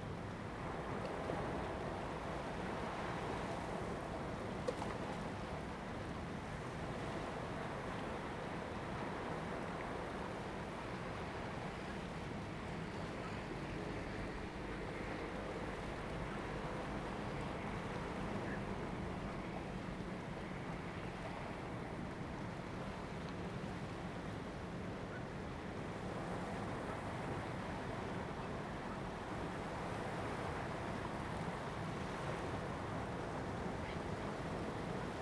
Small pier, Sound of the waves
Zoom H2n MS +XY
Taitung County, Taiwan